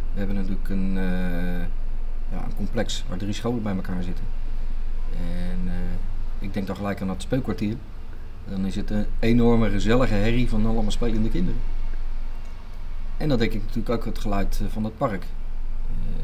Wijkagent Arthur is gespitst op geluiden
Wijkagent Arthur vertelt over de geluiden van de Stevenshof en hoe hij luister als agent